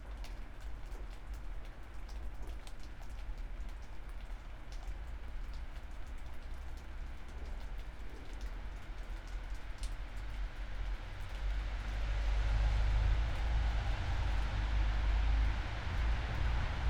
while windows are open, Maribor, Slovenia - dangerous rain